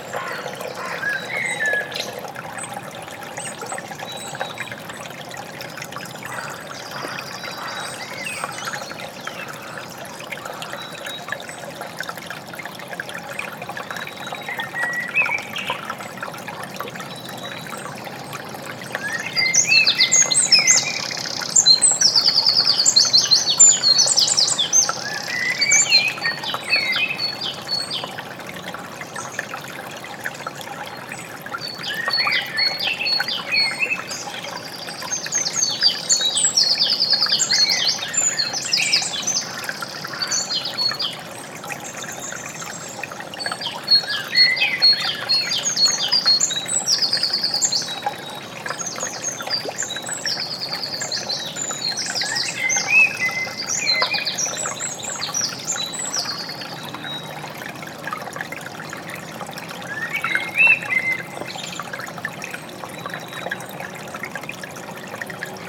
Chilcompton, Radstock, Somerset, UK - Woodland birdsong
Sound of small stream with woodland birds. Sony PCM-D50
2 May 2016